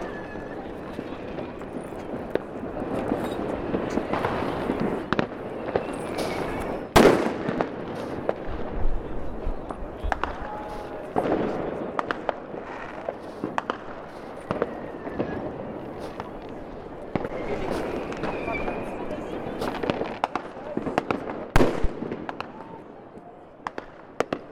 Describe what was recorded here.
The bridge was filled with people who gathered together to start their firework to greet the new year 2013. To protect the recording device 'H1' from wind and very loud explosions i had to wrap it in felt. So, sound my be damped a little (more).